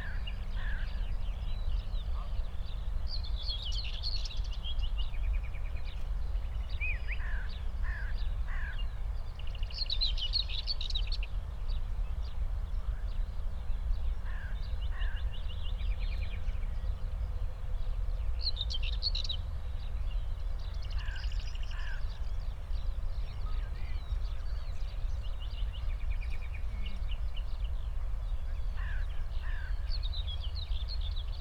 Tempelhofer Feld, Berlin, Deutschland - morning ambience /w Common whitethroat
spring morning ambience at former Tempelhof airport, a Common whitethroat (Dorngrasmücke, Curruca communis) calling nearby, a Nightingale in a distance, Skylarks and others too.
(Sony PCM D50, Primo EM272)